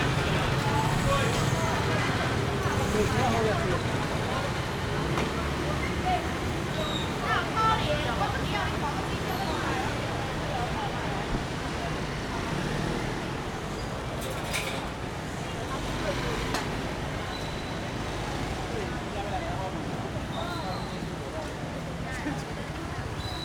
Walking in the traditional market
Rode NT4+Zoom H4n